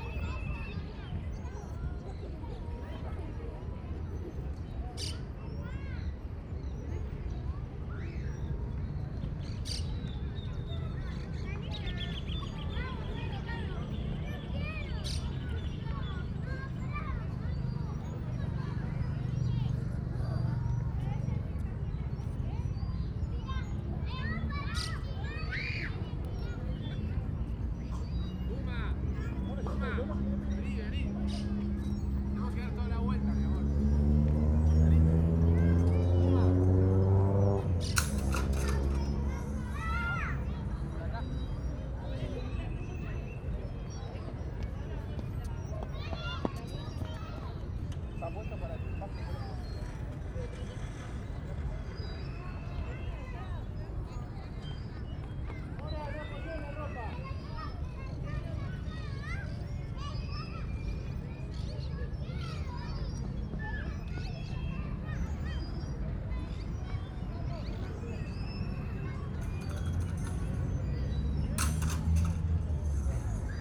Parque Micaela Bastidas - playground on a sunday afternoon in wintertime
Children and families play in a playground on a brisk, partly cloudy Sunday afternoon in winter. On one side, kids line up to slide down a zip line, their parents running after them. On the other side, a typical playground. Recorded from a bench on the path, using a Sennheiser AMBEO VR (ambisonic) and rendered to binaural using KU100 HRTF.
Argentina